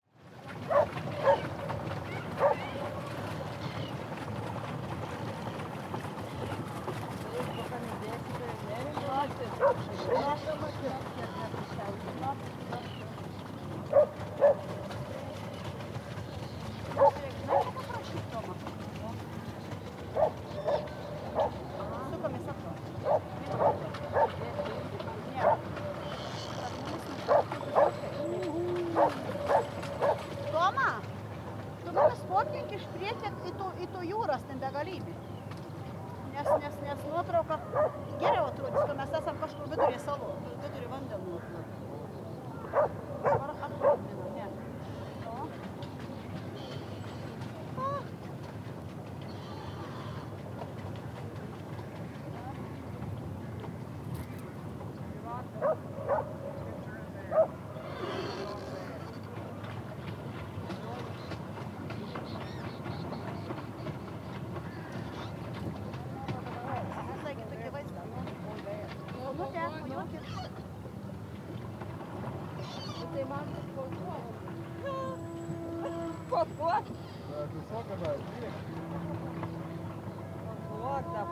Nida, Lithuania - End of the middle peer
Recordist: Anita Černá.
Description: Recorded at the end of the peer on a sunny day. People on a boat talking, dog barking, water, birds and the sound of a boat floating. Recorded with ZOOM H2N Handy Recorder.